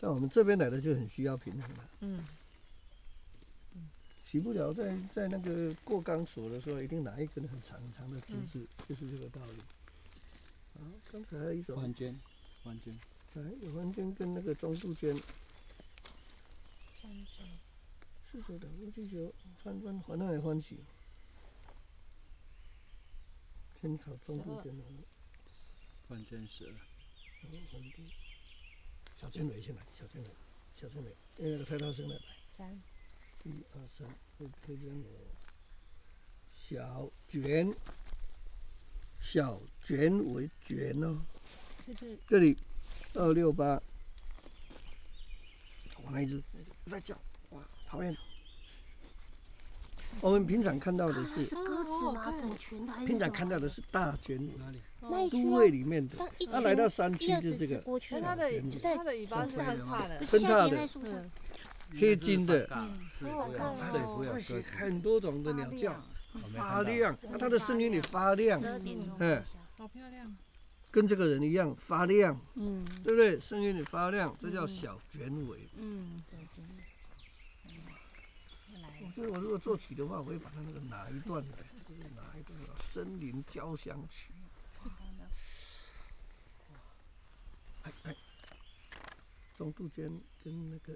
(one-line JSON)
{"title": "草楠濕地, 桃米里, Nantou County - Professor of ecology tour", "date": "2016-03-26 09:13:00", "description": "in the wetlands, Bird sounds, Professor of ecology tour", "latitude": "23.95", "longitude": "120.91", "altitude": "591", "timezone": "Asia/Taipei"}